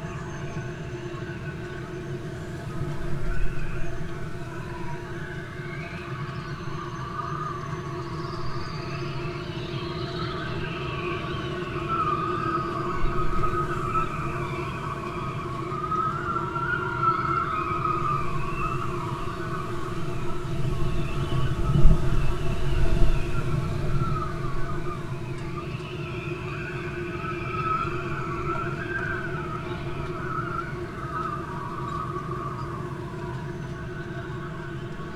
{"title": "workum: marina - the city, the country & me: mic in metal box trolley", "date": "2013-06-23 00:55:00", "description": "stormy night (force 5-7), mic in a metal box trolley\nthe city, the country & me: june 23, 2013", "latitude": "52.97", "longitude": "5.42", "altitude": "1", "timezone": "Europe/Amsterdam"}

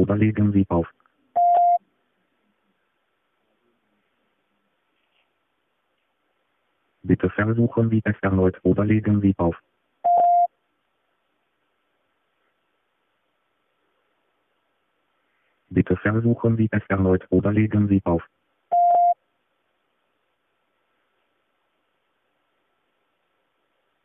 {"title": "Telefonzelle, Dieffenbachstraße - radio aporee ::: oder legen sie auf", "latitude": "52.49", "longitude": "13.42", "altitude": "42", "timezone": "GMT+1"}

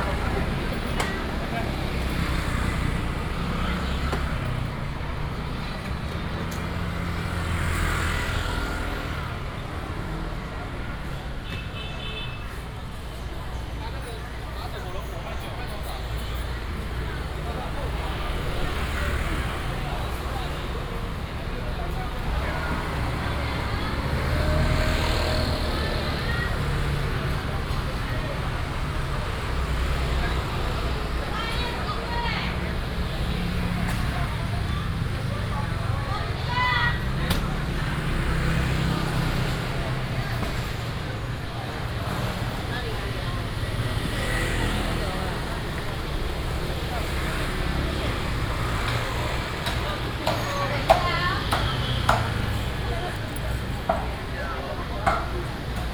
{"title": "Zhongping Rd., Taiping Dist., Taichung City - Walking through the traditional market", "date": "2017-09-19 09:11:00", "description": "Walking through the traditional market, Binaural recordings, Sony PCM D100+ Soundman OKM II", "latitude": "24.13", "longitude": "120.72", "altitude": "88", "timezone": "Asia/Taipei"}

